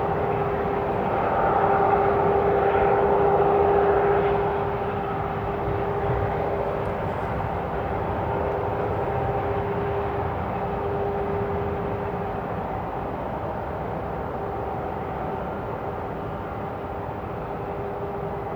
Grevenbroich, Germany - How mine machinery sounds from half a kilometer